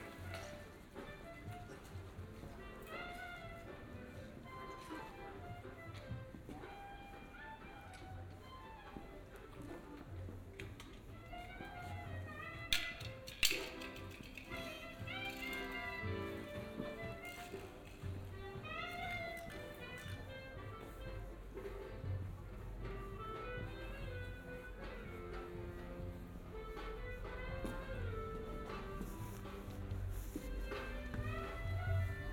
Der Leerstand spricht, Bad Orb - Complaints
While preparing his shop (putting out commodities) he states that the voice of the radio disturbs him. Binaural recording.
Bad Orb, Germany, 14 November 2016